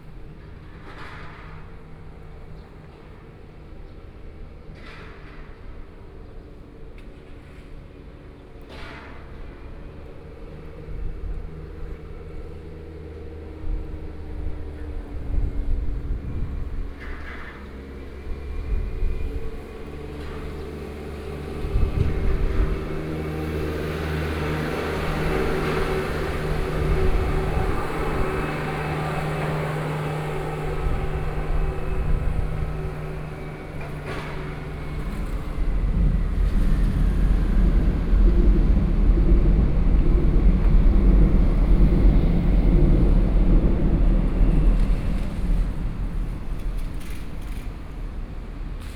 宜蘭市和睦里, Yilan City - Trains traveling through
Traffic Sound, Trains traveling through, under the railway track
Sony PCM D50+ Soundman OKM II
22 July 2014, ~15:00